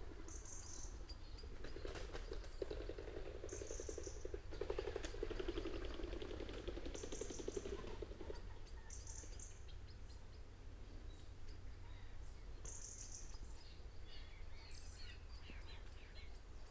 Luttons, UK - pheasants leaving roost ...
pheasants leaving roost ... dpa 4060s in parabolic to MixPre3 ... bird calls ... crow ... robin ... wren ... blackbird ... treecreeper ... red-legged partridge ... redwing ...